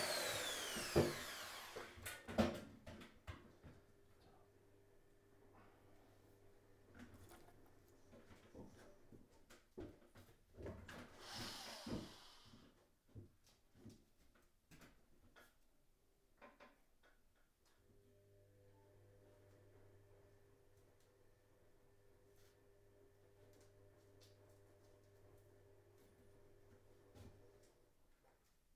My Dining Room, Reading, UK - DIY and washing machine
We have recently been redecorating our dining room to make more space for our work things and to make it a calmer colour. I wanted us to put up a lot of shelves and the only way to mount the batons is to drill through the old plaster into the brick walls; the bricks are very strong and so we need to use the hammer drill to get into them. In this recording you can hear Mark and then me doing stints of drilling, getting up and down off the ladder, and picking screws and rawlplugs off the mantelpiece. In the background, our washing machine is churning away. A very productive morning.
January 2015